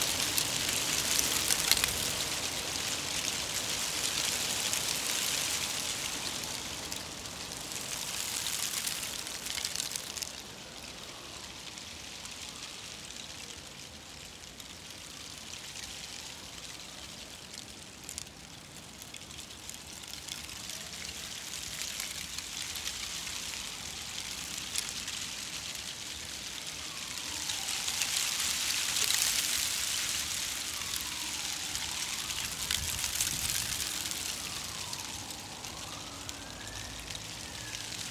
Varšavská, Vinohrady, Czechia - Leaves skittering in the wind
An eddy of wind formed at a street corner in Vinohrady (Prague) sets the dry leaves collected there into a phantom dervish.
4 March 2019